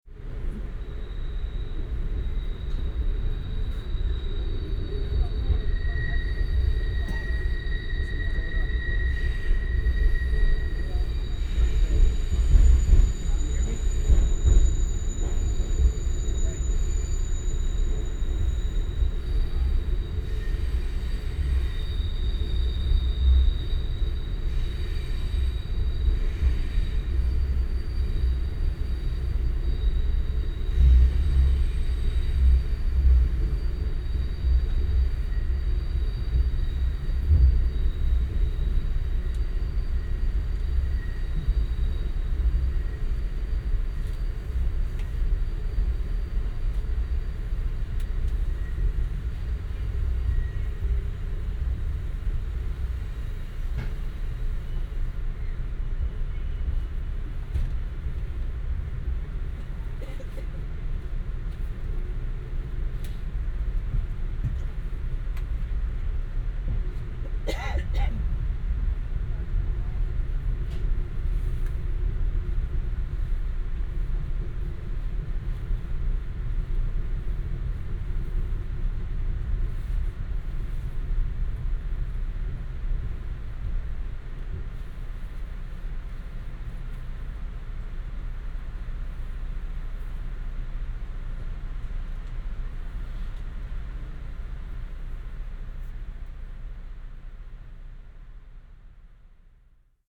nyc - penn station - amtrak train 70 (montreal to nyc) arriving at penn station
amtrak train 70 (montreal to nyc) coming to a halt as it arrives at penn station.
NY, USA, May 2009